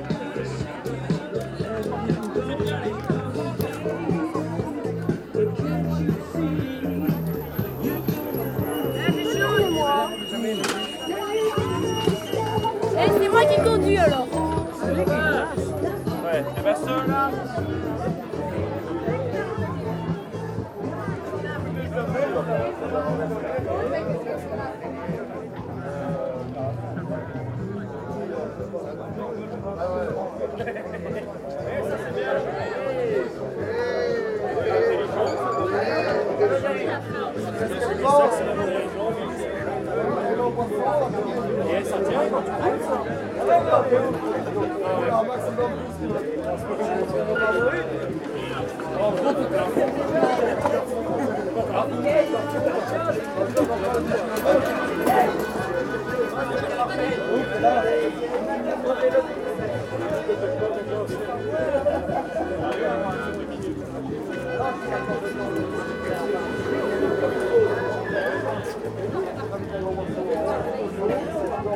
Walhain, Belgique - Local festivity
A local festivity in Perbais. This a cuistax race. This small village is very active in all kind of feasts.